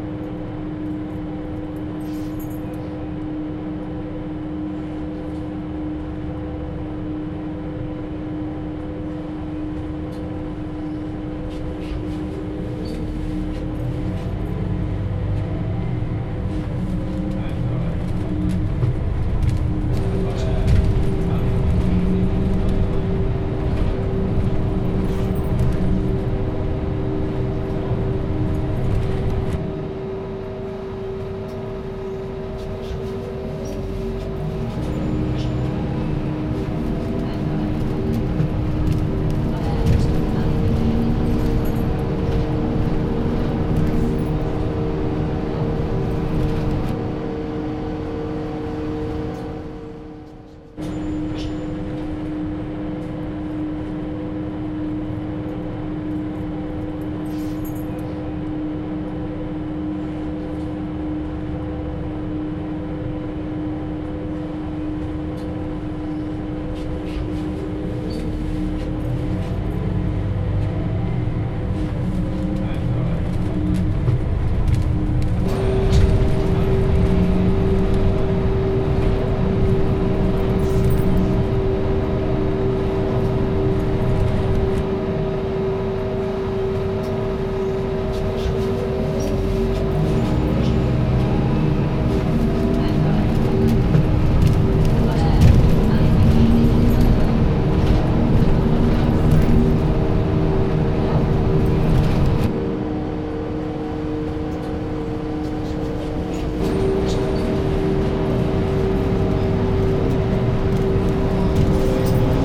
Wearmouth Bridge, Sunderland, UK - Bus journey into Sunderland City Centre
Travelling on a the Number 4 Bus into Sunderland City Centre. Leaving from the Northside of the Rive Wear ending up in John Street, Sunderland.
The original source recording has been processed, looped, layered and manipulated to show a more exciting, alternative way of experiencing the normal mundane way of travel, while still keeping the integrity and authenticity of the first captured recording.